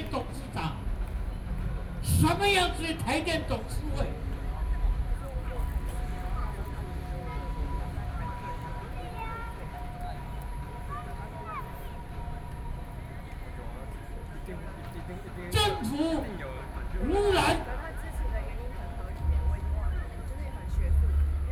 Antinuclear Civic Forum, Energy experts are well-known speech, Sony PCM D50 + Soundman OKM II
中正區 (Zhongzheng), 台北市 (Taipei City), 中華民國